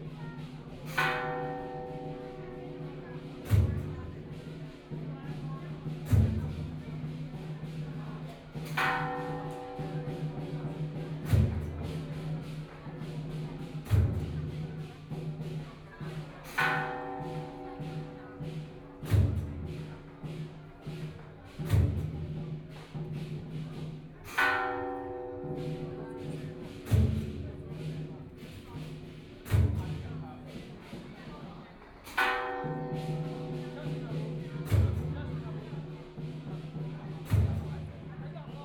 Ci Hui Temple, Banqiao - Temple festivals
Inside the temple drums and bells, Traditional Festivals, Mazu (goddess), Binaural recordings, Zoom H6+ Soundman OKM II